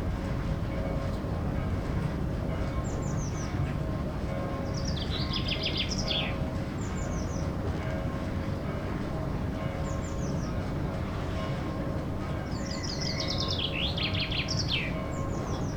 burg/wupper, steinweg: sesselbahn - the city, the country & me: under a supporting tower of a chairlift
rope of chairlift passes over the sheaves, church bells, singing bird
the city, the country & me: may 6, 2011